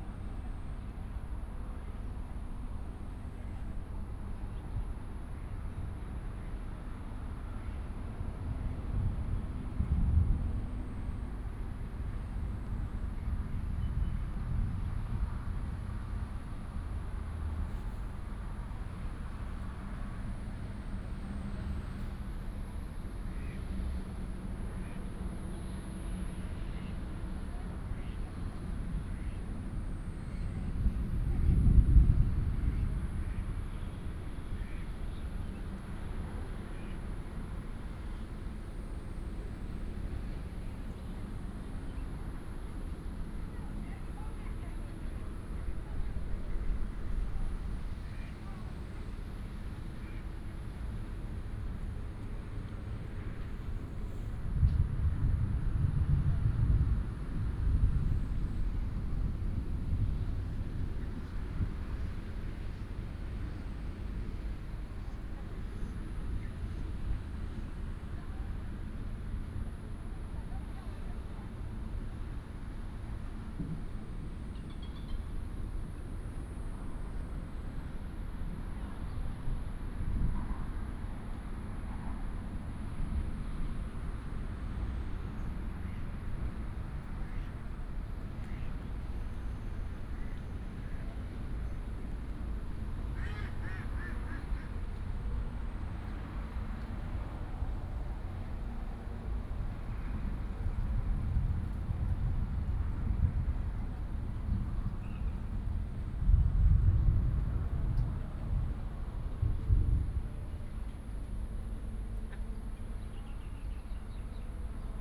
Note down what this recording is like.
in the Park, Thunder, Traffic sound, ducks